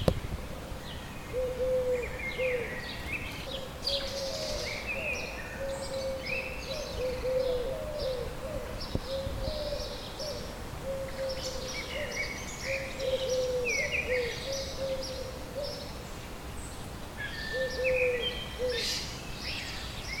Nova Gorica, Slovenija, Ledinski Park - Pričja Budnica